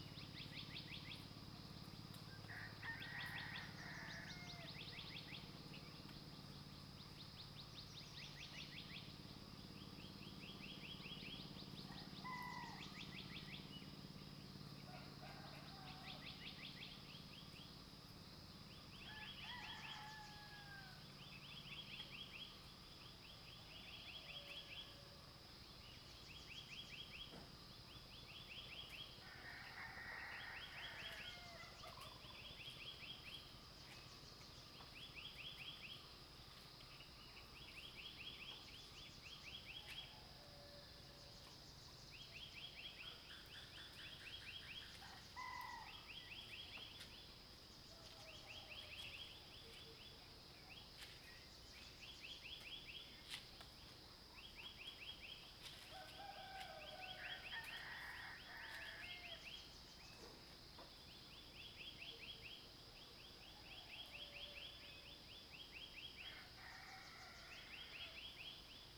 埔里鎮桃米里, Nantou County - Early morning
Bird calls, Crowing sounds
Zoom H2n MS+XY